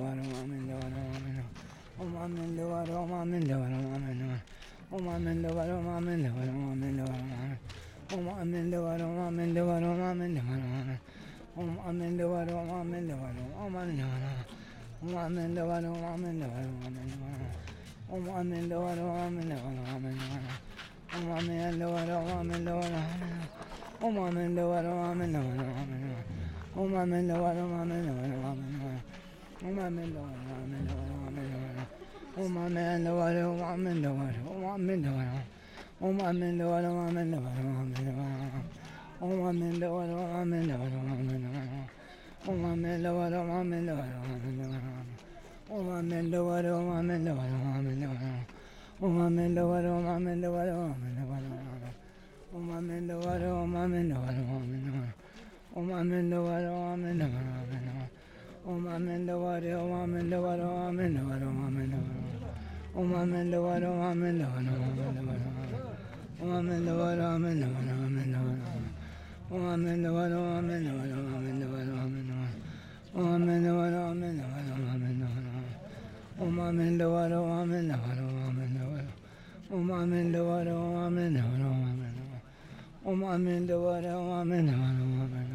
Leh - Ladak - Inde
Leh - Ladak - Inde
Procession sur les hauteurs de la ville
Je suis pendant quelques minutes un moine retardataire pressant le pas pour rejoindre la procession
Fostex FR2 + AudioTechnica AT825
Leh District, Ladakh, India